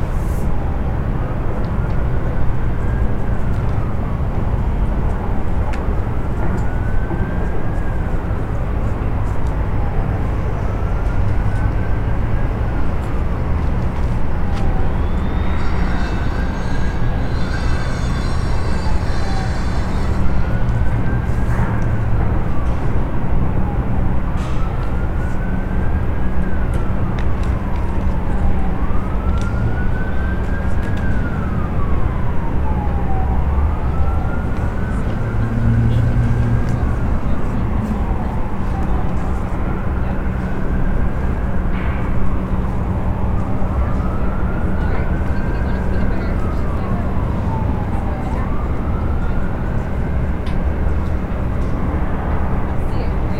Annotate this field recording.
Houston Cityscape from a terrace at the George R Brown Convention Center.